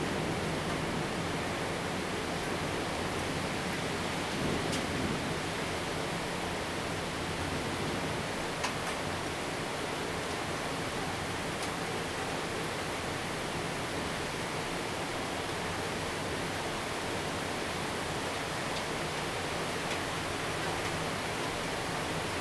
Rende 2nd Rd., 桃園市八德區 - Thunderstorm
Thunderstorm, rain, Traffic sound
Zoom H2n MS+XY
14 August 2020, 18:38